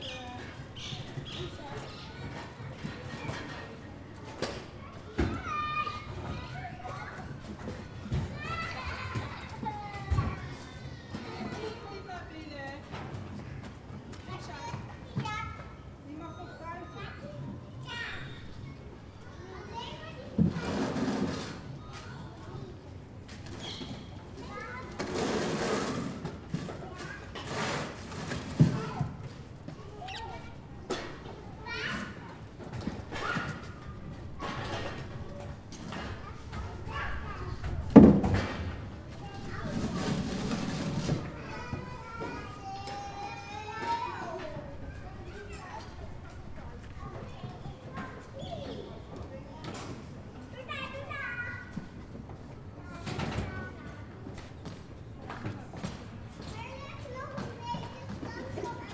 Parents collect their children at the daycare.
Recorded with Zoom H2 internal mice. Some slight wind.